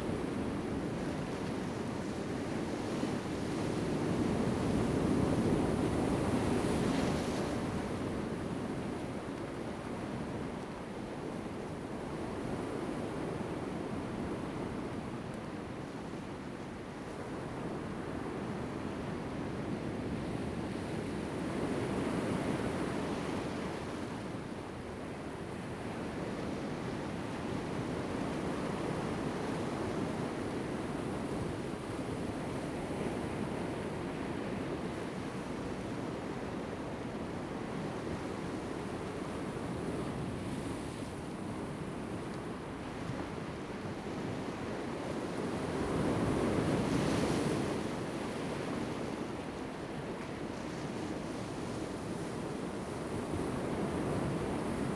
將軍岩, Lüdao Township - Rocky coast
Rocky coast, sound of the waves
Zoom H2n MS +XY